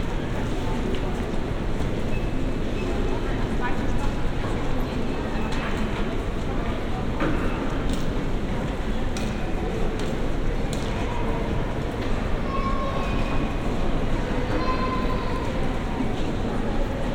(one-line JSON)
{"title": "Berlin, Friedrichstr., bookstore - 2nd floor ambience", "date": "2012-12-21 14:50:00", "description": "annual bookstore recording, hum, murmur and scanners heard from a platform on the 2nd floor.\n(Olympus LS5, Primo EM172)", "latitude": "52.52", "longitude": "13.39", "altitude": "49", "timezone": "Europe/Berlin"}